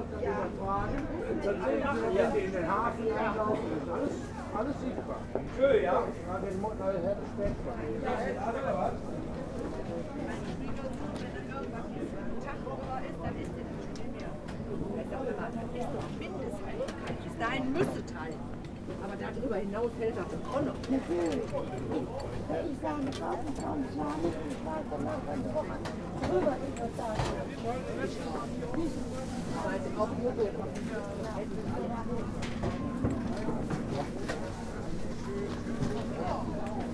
remscheid: theodor-heuss-platz - the city, the country & me: weekly market
weekly market, soundwalk
the city, the country & me: november 9, 2013
Remscheid, Germany, 9 November 2013